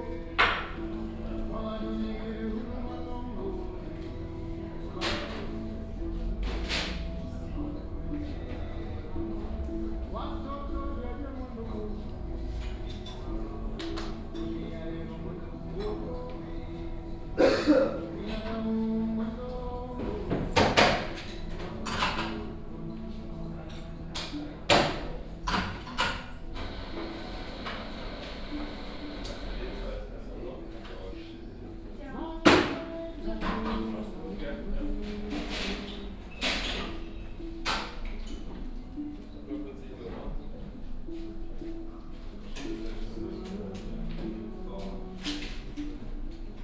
Reuterstr./Pflügerstr., Berlin, Deutschland - afternoon cafe ambience
coffee break at Cafe Goldberg, Berlin Neukölln, ambience inside cafe.
(Sony PCM D50, OKM2)
Berlin, Germany